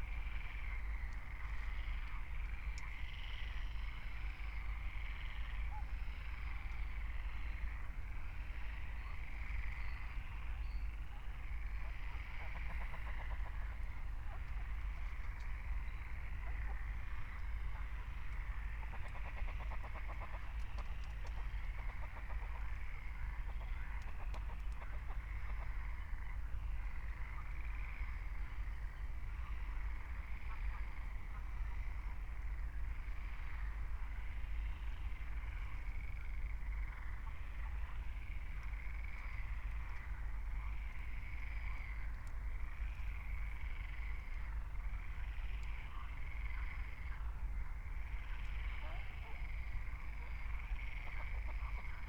23:50 Berlin, Buch, Moorlinse - pond, wetland ambience